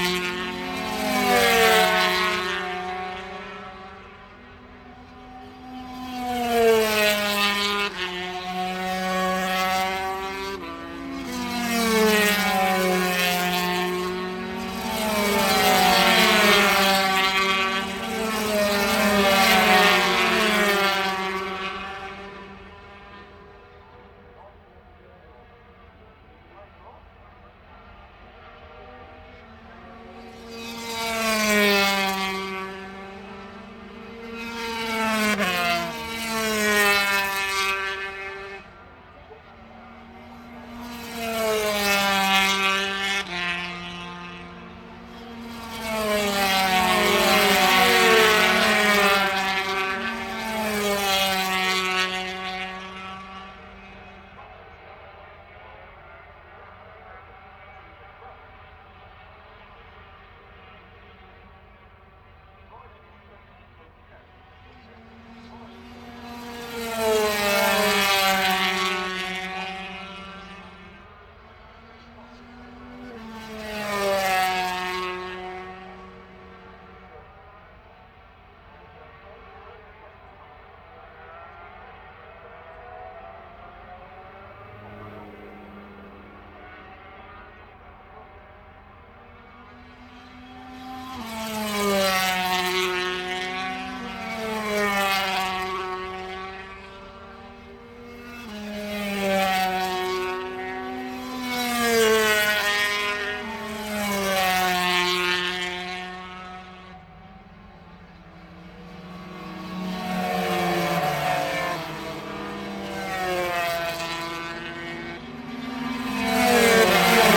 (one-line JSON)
{"title": "Derby, UK - british motorcycle grand prix 2006 ... free practice 125", "date": "2006-06-30 09:00:00", "description": "british motorcycle grand prix 2006 ... free practice 125 ... one point stereo mic to minidisk", "latitude": "52.83", "longitude": "-1.37", "altitude": "81", "timezone": "Europe/London"}